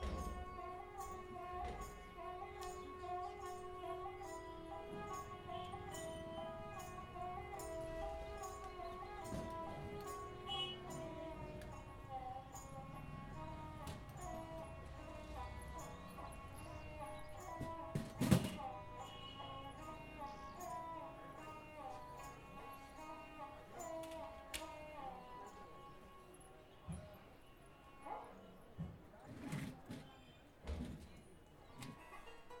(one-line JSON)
{"title": "Badi Basti, Pushkar, Rajasthan, Inde - Un matin dans la ville de Pushkar", "date": "2014-12-01 08:21:00", "latitude": "26.49", "longitude": "74.55", "altitude": "489", "timezone": "Asia/Kolkata"}